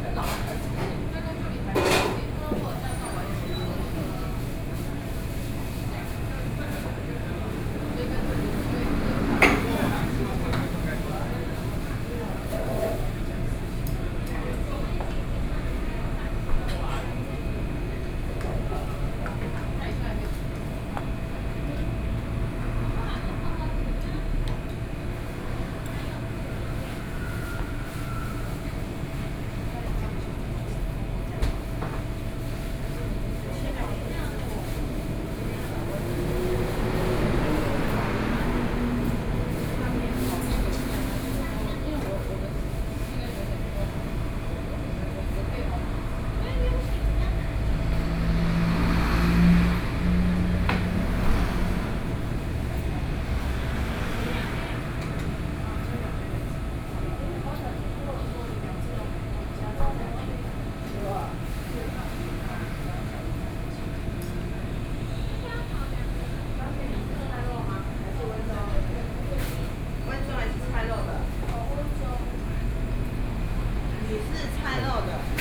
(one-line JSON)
{"title": "Linsen S. Rd., Taipei City - In the restaurant", "date": "2013-10-10 11:36:00", "description": "In the restaurant, Binaural recordings, Sony PCM D50 + Soundman OKM II", "latitude": "25.04", "longitude": "121.52", "altitude": "13", "timezone": "Asia/Taipei"}